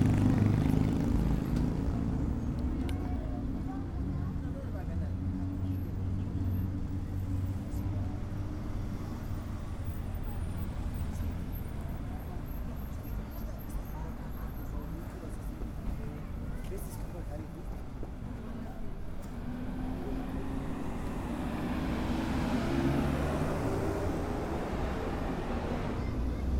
Plaza Riosinho, Bolivia - Plaza Riosinho
por Fernando Hidalgo
Our Lady of Peace, Bolivia, 8 August 2013, 09:33